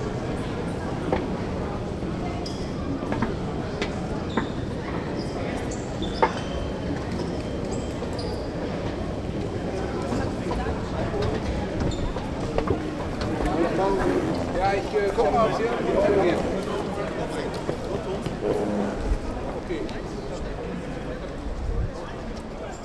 mainz: hbf - the city, the country & me: main station
a walk through the station
the city, the country & me: october 16, 2010